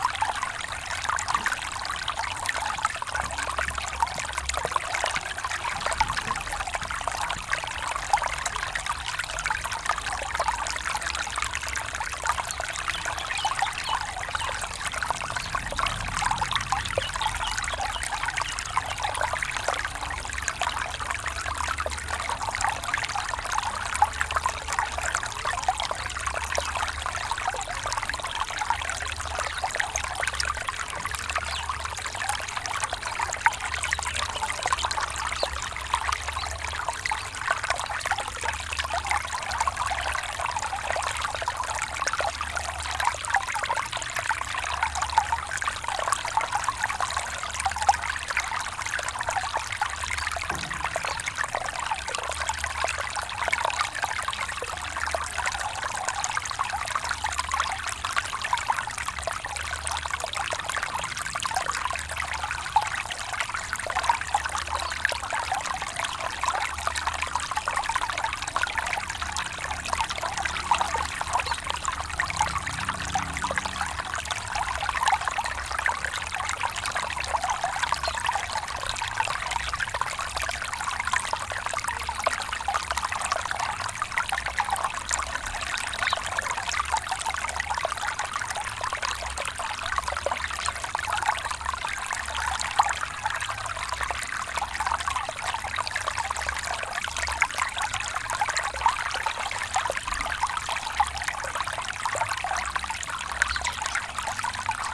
Bonya Residence Ghana - Little Stream in Bonya residence Ghana.
Little Stream in Bonya residence Ghana.
Date: 09.04.2022. Time: 8am. Temperature: 32°C.
subtle human and bird activity.
Format: AB.
Recording Gear: Zoom F4, RODE M5 MP.
Field and Monitoring Gear: Beyerdynamic DT 770 PRO and DT 1990 PRO.
Best listening with headphones for spatial immersion.